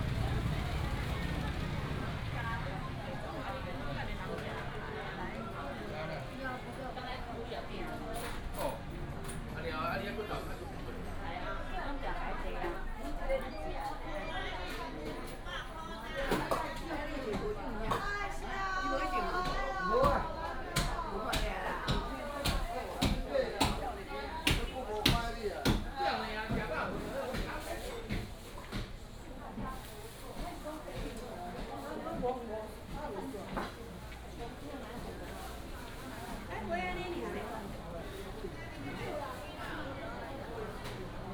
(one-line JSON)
{"title": "後龍公有零售市場, 苗栗縣後龍鎮 - Walking in the market", "date": "2017-03-24 10:05:00", "description": "Walking in the market", "latitude": "24.62", "longitude": "120.79", "altitude": "16", "timezone": "Asia/Taipei"}